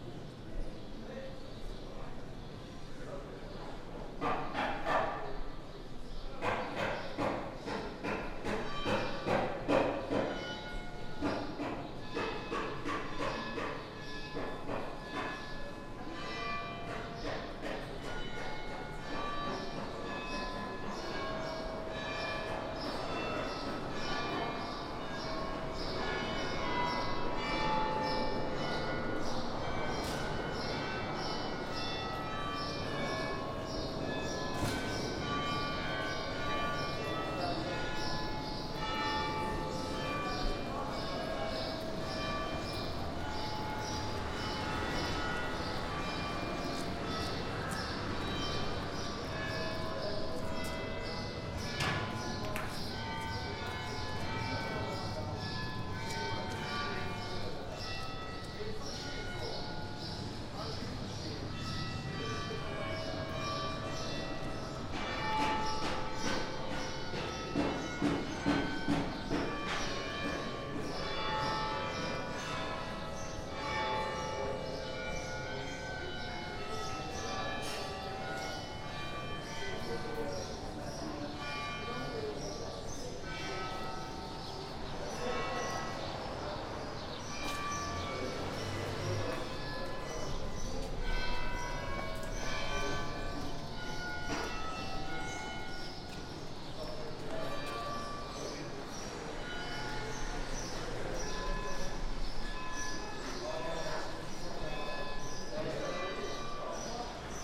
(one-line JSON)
{"title": "Paris, place des abesse", "description": "ambiance pendant le tournage de pigalle la nuit", "latitude": "48.88", "longitude": "2.34", "altitude": "85", "timezone": "Europe/Berlin"}